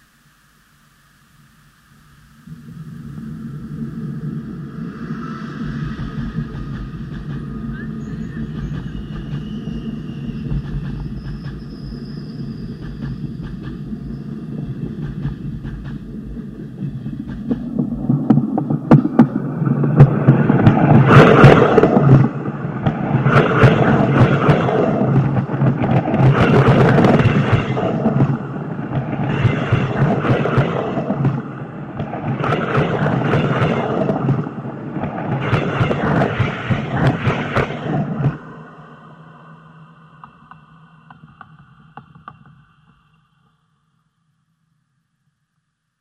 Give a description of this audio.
Sound recording of a train crossing (contact microphone)